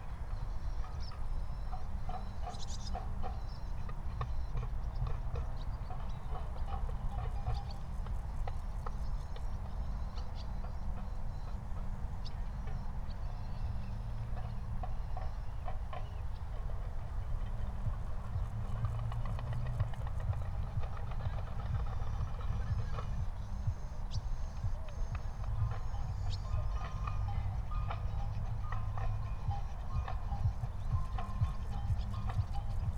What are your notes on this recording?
20:37 Berlin, Tempelhofer Feld - field ambience